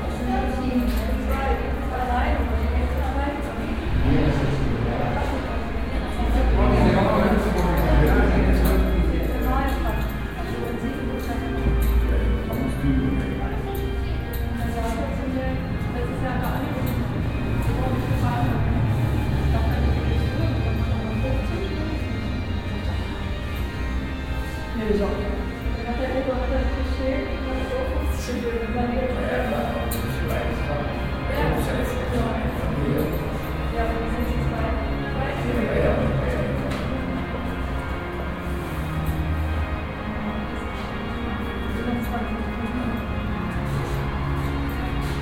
Marienkirche Foyer Orgel
Marienkirche Alexanderplatz, Sa. 14.06.2008, 17:30. Kirche geschlossen wegen Orgelkonzert, Foyer, Gespräche, Strassengeräusche